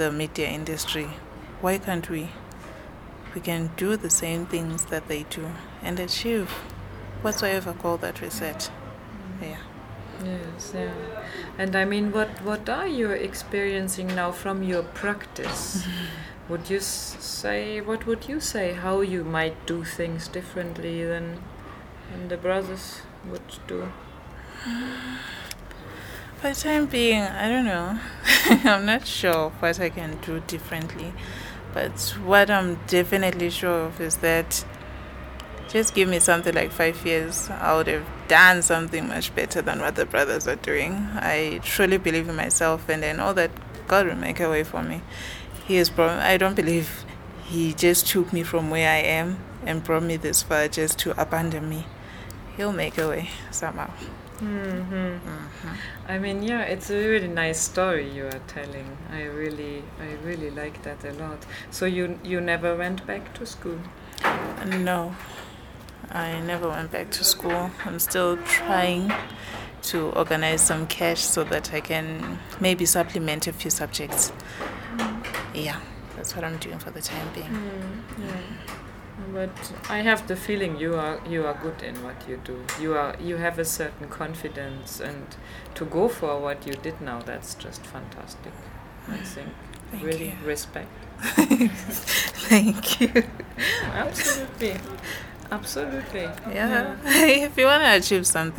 Makokoba, Bulawayo, Zimbabwe - Thembele and Juliette, two young filmmakers talk their dreams….
We made this recording in a sculptor’s studio at the far end of NGZ’s big courtyard, sitting between large metal bits of sculptures… Thembele Thlajayo and Juliette Makara are two young filmmakers trained at Ibhayisikopo Film Academy by Priscilla Sithole. Here they talk about their new perspectives on life after the training and the dreams they are pursuing now as filmmakers…
The full interview with Thembele and Juliette is archived here: